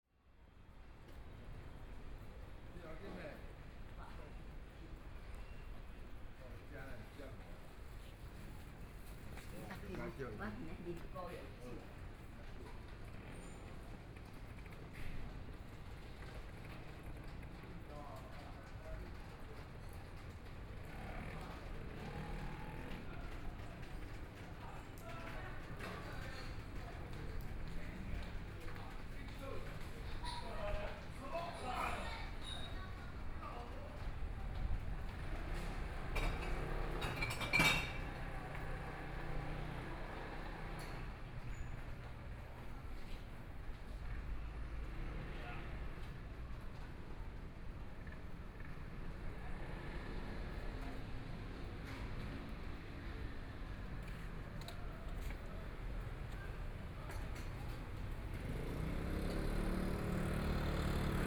Tianxiang Rd., Zhongshan Dist. - Walking at night in a small way
Walking at night in a small way, Traffic Sound
Please turn up the volume a little. Binaural recordings, Sony PCM D100+ Soundman OKM II